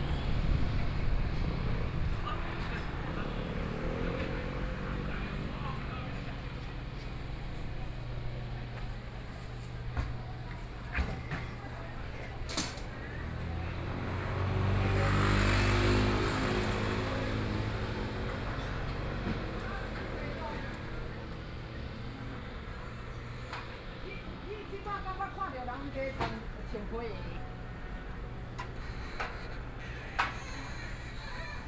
Mingyi St., Hualien City - Traditional Market
The market is ready for a break finishing cleaning, Binaural recordings, Sony PCM D50+ Soundman OKM II